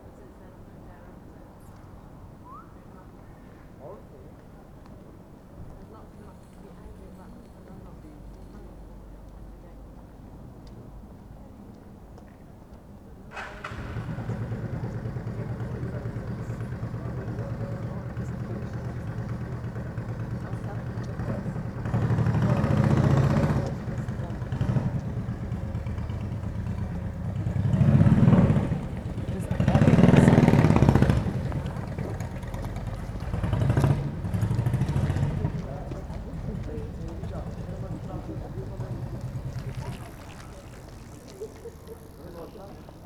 Berlin: Vermessungspunkt Maybachufer / Bürknerstraße - Klangvermessung Kreuzkölln ::: 17.04.2013 ::: 02:13